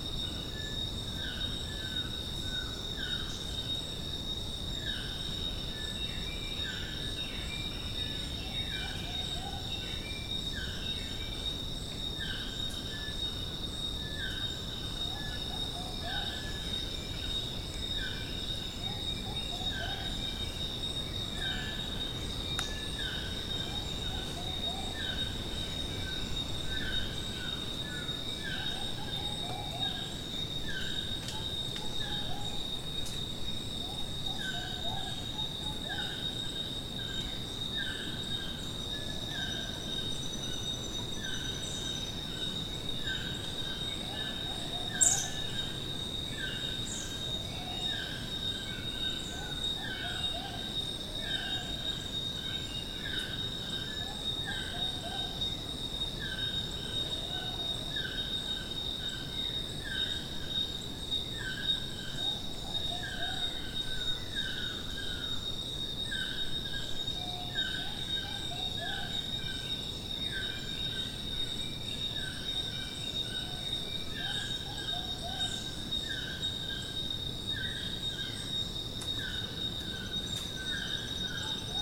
Tenorio Volcano National Park - Heliconias Lodge - Canopy ambience
a short recording on my Olympus LS-10S